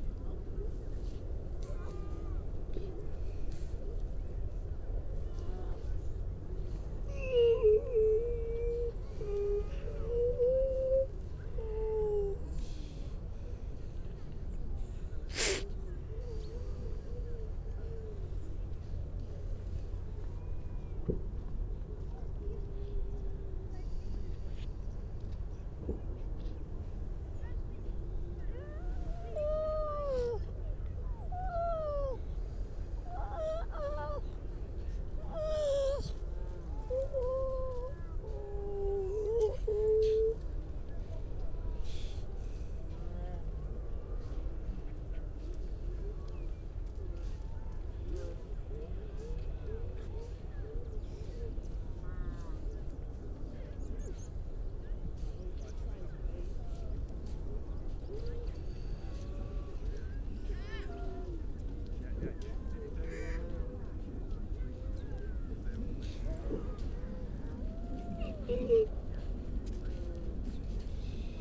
Unnamed Road, Louth, UK - grey seals soundscape ...
grey seals soundscape ... mainly females and pups ... parabolic ... all sorts of background noise ...